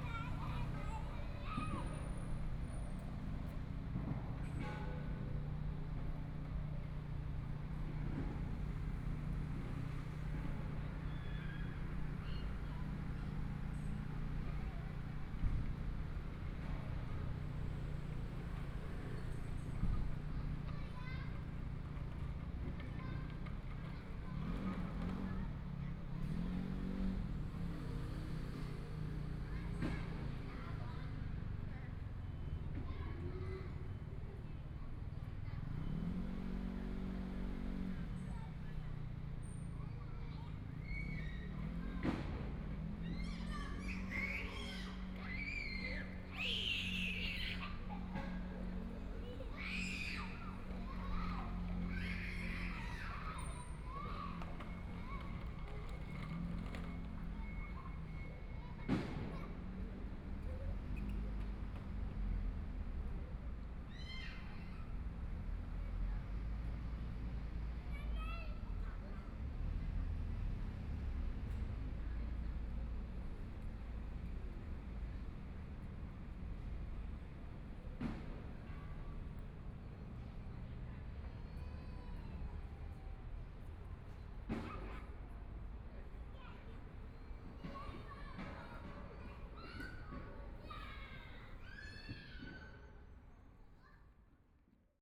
Kids in the park, Discharge (Gas barrel), Clammy cloudy, Binaural recordings, Zoom H4n+ Soundman OKM II